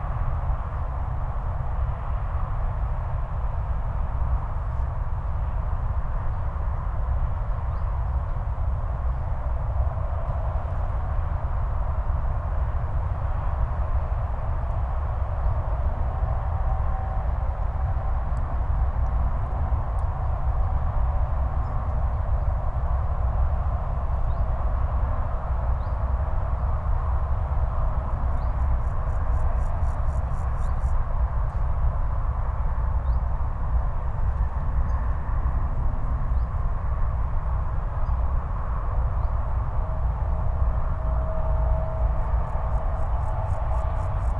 dystopic drone of rushing cars, from the nearby Autobahn A4, heard in a so called forest laboratory, which rather intensifies the uncomfortable feelings of the recordist...
"The Cologne Forest Laboratory is a joint project of Toyota, RheinEnergie and the City of Cologne. Here new woods and forest images are to be researched, which bring us knowledge about how the forest of the future looks like and how this is to be managed. The research facility is experimenting in four thematic areas: the convertible forest, the energy forest, the climate forest and the wilderness forest."
(Sony PCM D50, Primo Em172)
Regierungsbezirk Köln, Nordrhein-Westfalen, Deutschland, 29 July, ~20:00